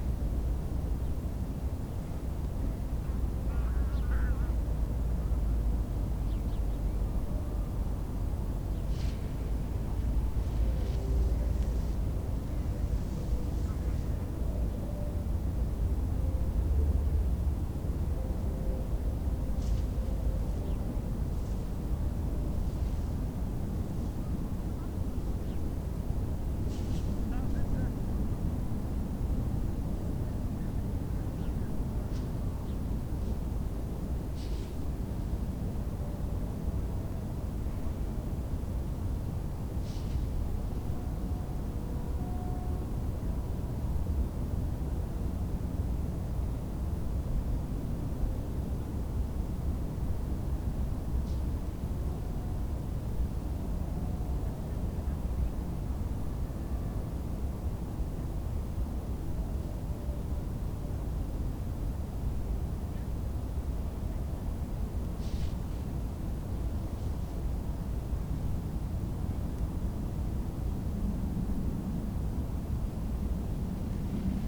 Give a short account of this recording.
cold and windy afternoon (-10 degrees celsius), people busy with kites, promenaders and the sound of motorway a100 in the background